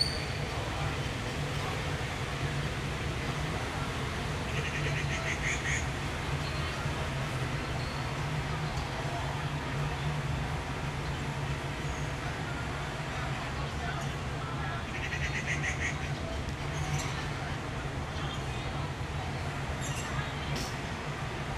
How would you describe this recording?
From the three smokestacks sculpture at the moat park, a group of teenagers can just be heard talking as they wait for a bus. Waterfowl are also nearby. A man bangs pots, while cleaning them outside, at the yakiniku barbecue restaurant across the street. Stereo mics (Audiotalaia-Primo ECM 172), recorded via Olympus LS-10.